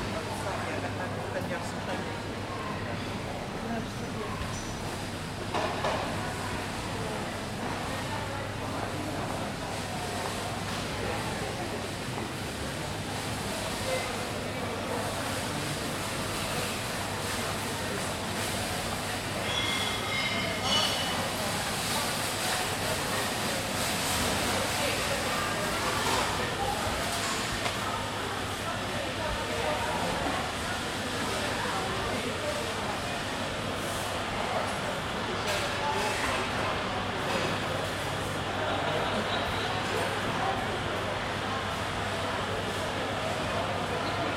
Southampton Airport, Eastleigh District, UK - 051 Departure lounge